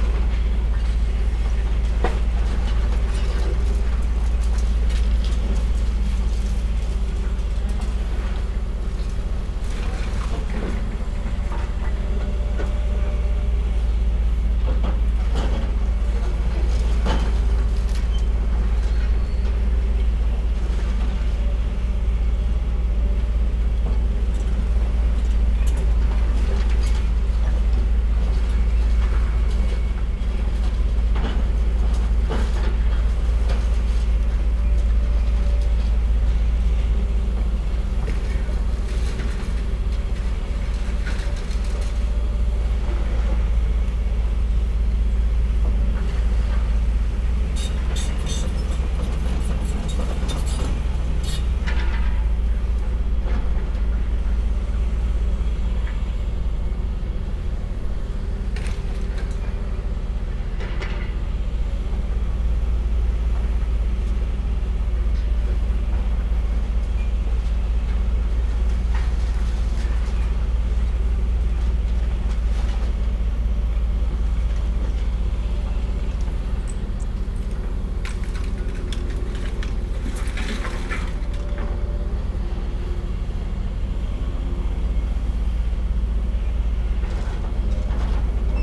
Basingstoke Road, Reading, UK - Demolition of old pink Art Deco factory recorded from Ultima Business Solutions Carpark
Recorded through the fence looking directly onto the building site until I was told to get off because it's private property.
10 June 2015, ~15:00